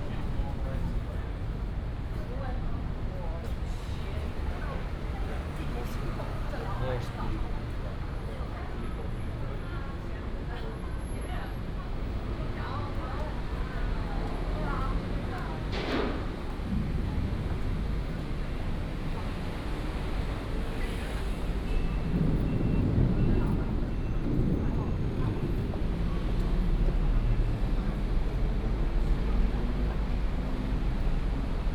Sec., Xinyi Rd., Xinyi Dist., Taipei City - Walking on the road
Walking on the road, Traffic noise, Thunder sound